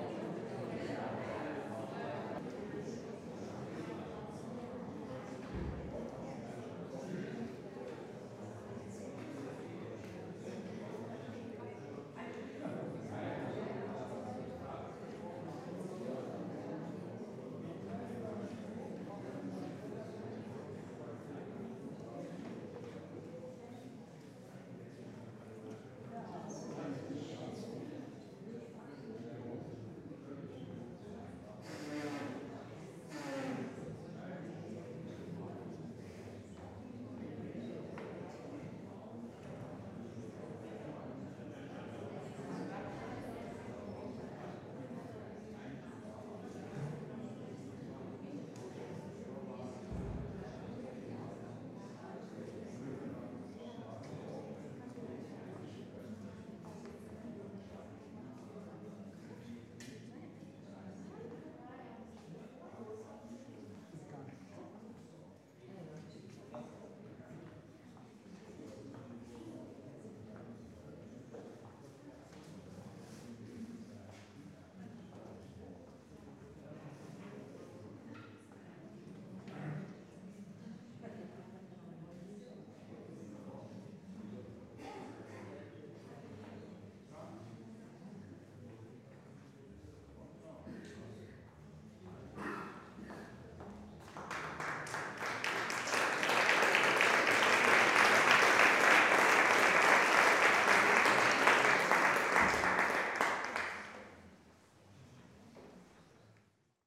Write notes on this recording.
Vor einem Konzert. Tascam DA-P1 7 TLM 103